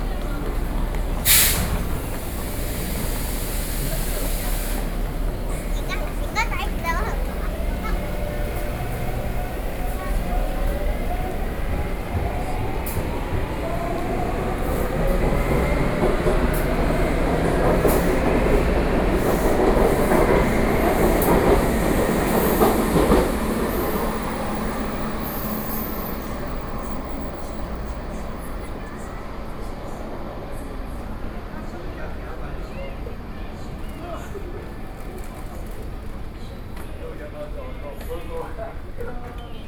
Minquan West Road Station, Taipei City - in the MRT stations